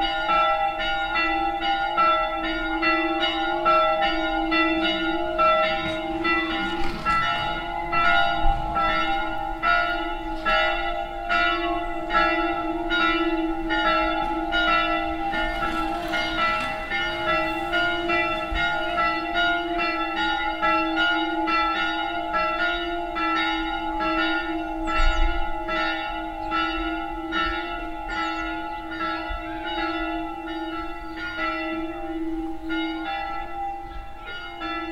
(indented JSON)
{
  "title": "enscherange, train track and church bells",
  "date": "2011-08-03 16:13:00",
  "description": "At the train track as the gates close with a warning signal. A train passing by and the 12 o clock bells of the Saint Laurent church start.\nAlso present here in the background the sound of playing kids at the nearby camping areal.\nEnscherange, Zugschiene und Kirchenglocke\nBei den Schienen, als die Schranke mit einem Warnsignal schließt. Ein Zug fährt hindurch und die 12-Uhr-Glocke von der St. Laurentius-Kirche beginnt zu läuten.\nEbenfalls hier im Hintergrund das Geräusch von spielenden Kindern auf dem nahe gelegenen Campingareal.\nEnscherange, voir ferrée et cloches de l'église\nAu passage à niveau quand les barrières se ferment et que le signal retentit. Un train passe et le carillon de l’église Saint-Laurent commence à sonner 12h00.\nIci aussi dans le fond, le bruit d’enfants qui jouent sur le terrain de camping proche.\nProject - Klangraum Our - topographic field recordings, sound objects and social ambiences",
  "latitude": "50.00",
  "longitude": "5.99",
  "altitude": "305",
  "timezone": "Europe/Luxembourg"
}